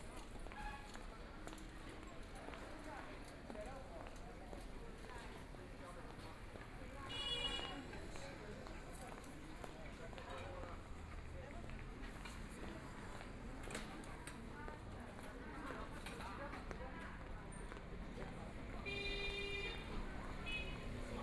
Jian road, Shanghai - in the Street
Walking the streets in the small community, Pedestrians, Traffic Sound, Binaural recording, Zoom H6+ Soundman OKM II ( SoundMap20131126- 30)
Shanghai, China, 26 November